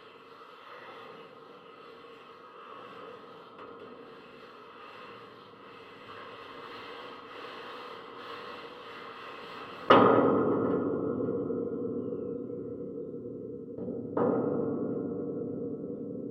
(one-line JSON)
{"title": "Court-St.-Étienne, Belgique - Metallic fence", "date": "2016-04-07 12:50:00", "description": "A metallic fence was recently added near all the train platform. I hit the metal with a finger. Audiotalaia contact microphones.", "latitude": "50.64", "longitude": "4.57", "altitude": "64", "timezone": "Europe/Brussels"}